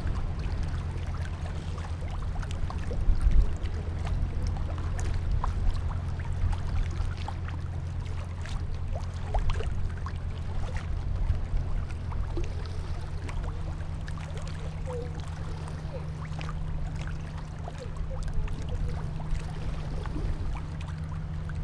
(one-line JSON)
{"title": "赤崁遊客碼頭, Baisha Township - Small pier", "date": "2014-10-22 11:04:00", "description": "Tide, Quayside, Small pier\nZoom H6 + Rode NT4", "latitude": "23.67", "longitude": "119.60", "altitude": "4", "timezone": "Asia/Taipei"}